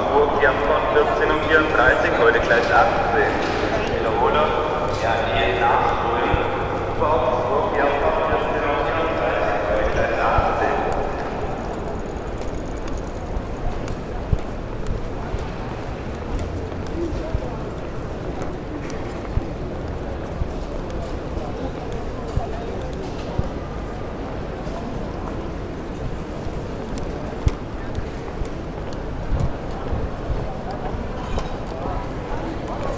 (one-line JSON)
{"title": "munich, main station - train to augsburg", "date": "2011-05-13 12:52:00", "latitude": "48.14", "longitude": "11.56", "timezone": "Europe/Berlin"}